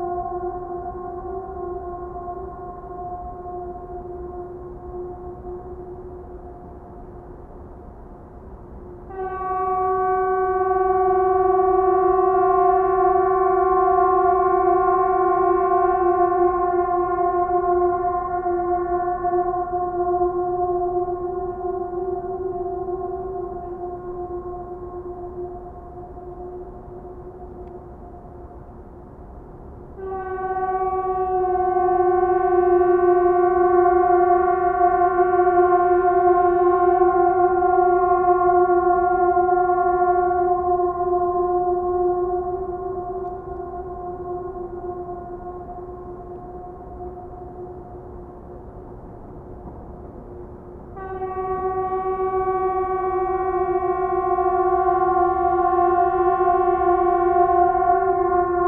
Umeå. Gammlia, Sirens test - Sirens test
Sirens test. Emergency horns testing takes place every three months in the city on the first Monday of the month at 3pm. Stereo recording with Rode NT4.